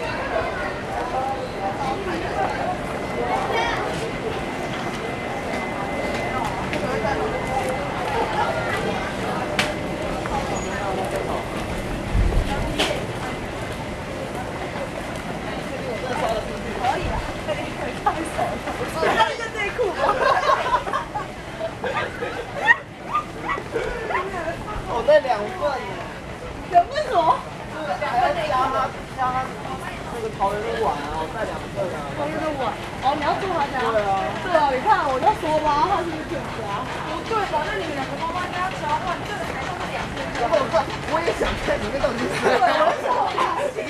Kaohsiung Station, Kaohsiung - The channel to the station hall
高雄市 (Kaohsiung City), 中華民國, 1 February 2012, ~12:00